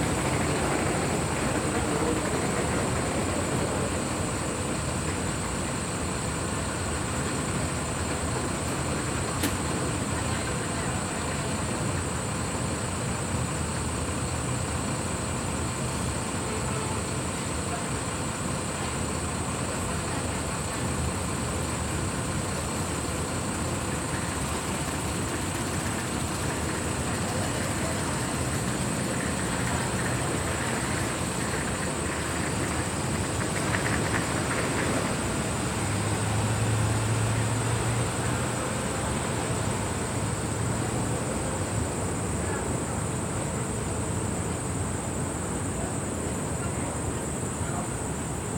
the AC unit flapping and grinding over a small patch marked off for the smokers
14 September, 18:43